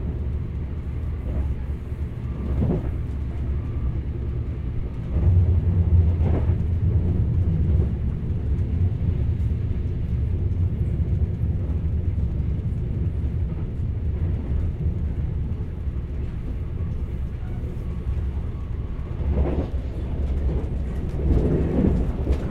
Zugansage Bern mit Weiterfahren
Zugansage für Weiterfahrten